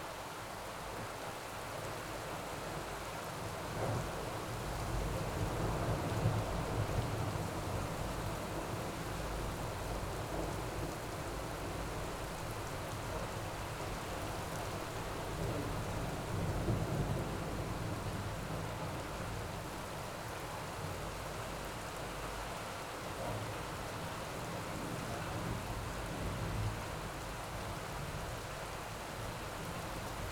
sounds of rain and thunder recorded over an ajar window.
Poznań, Poland, April 2014